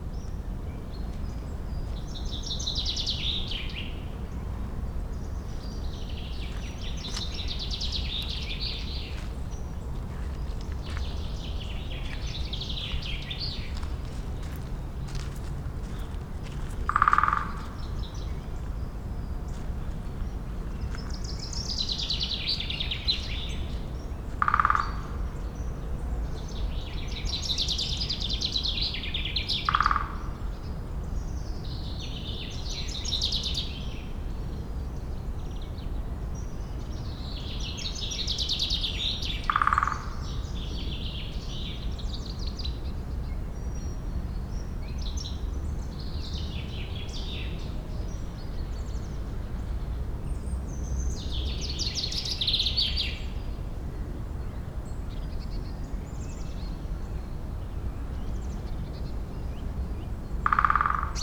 Lautertal, Germany - An Unexpected Woodpecker
After an unproductive period waiting to record woodpeckers I was approaching the equipment to dismantle and return to base when a woodpecker drummed above me and to the left. Being so close it was quite startling.